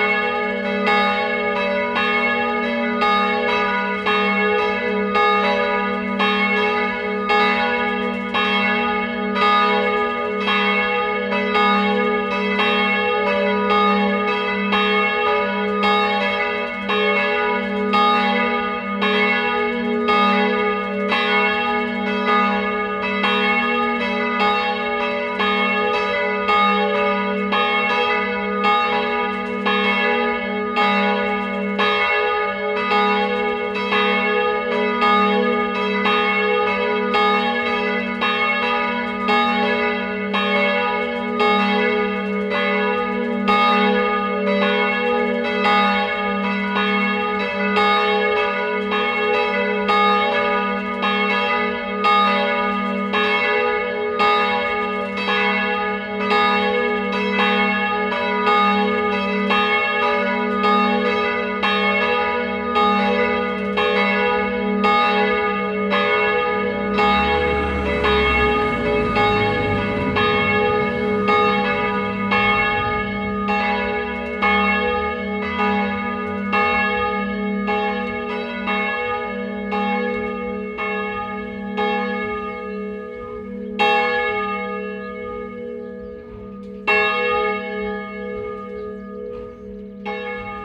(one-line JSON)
{"title": "Huldange, Luxemburg - Huldange, church, bells", "date": "2012-08-04 20:00:00", "description": "An der Hauptstraße nahe der Kirche. Der Klang der Abendglocken um 20:00 Uhr begleitet vom Straßenverkehr vorbeifahrender Fahrzeuge. Wenn man aufmerkam hinhört, bemerkt man das an- und auschwingen der Glocke im Glockenturm.\nAt the main street nearby the church. The sound of the church bells at 8 p.m.accompanied by the traffic sound of cars passing by. If you listen careful you can hear the swinging of the bell in the bell tower.", "latitude": "50.16", "longitude": "6.01", "altitude": "522", "timezone": "Europe/Luxembourg"}